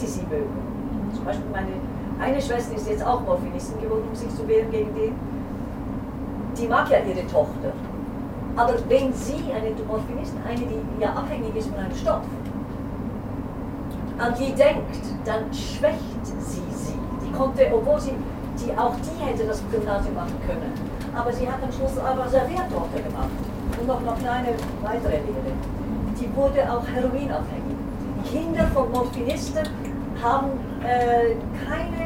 zurich, inside train, weird speech
woman holding a strange speech to nobody in particular. inside train restaurant, train zurich - zurich airport. recorded june 15, 2008. - project: "hasenbrot - a private sound diary"